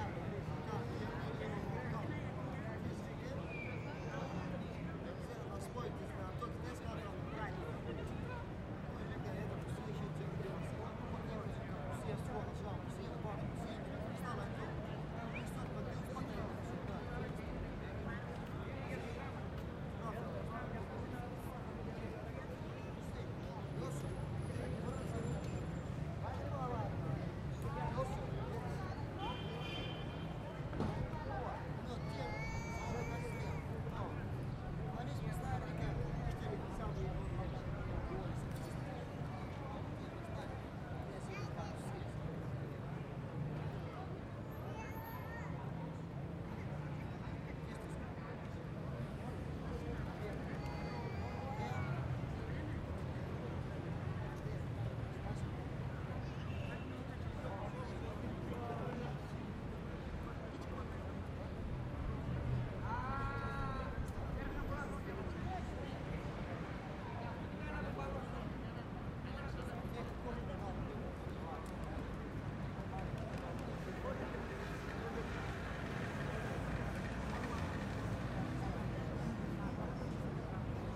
Ανθυπασπιστού Μιλτιάδη Γεωργίου, Ξάνθη, Ελλάδα - Central Square/ Κεντρική Πλατεία- 13:15
Men discussing, people talking distant, distant traffic.
Περιφέρεια Ανατολικής Μακεδονίας και Θράκης, Αποκεντρωμένη Διοίκηση Μακεδονίας - Θράκης, 12 May, ~14:00